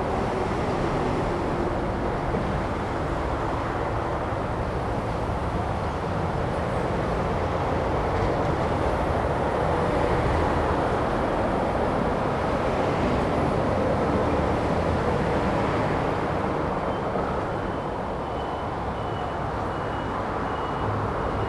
大圳路 Dazun Rd., Zhongli Dist. - traffic sound
Under the highway, traffic sound
Zoom H2n MS+XY
Zhongli District, Taoyuan City, Taiwan, 2 August, ~15:00